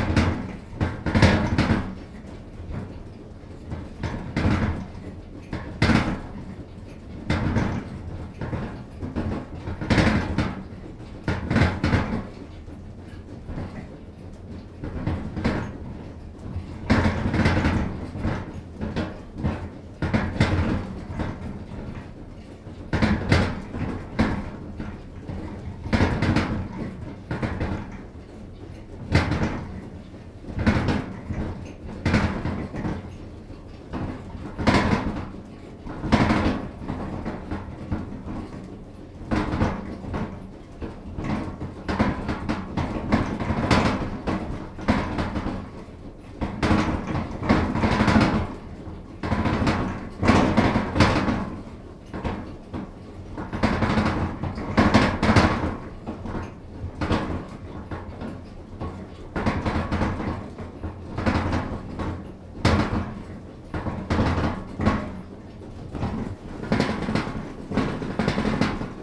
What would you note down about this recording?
At the roof level of the old mill. The sound of the flour mixers internal mechanic. Im Dachgeschoß der Mühle. Eine Aufnahme der inneren Mechanik des Mehlmischers. À l’étage sous le toit du vieux moulin. Le bruit extérieur du moulin à farine.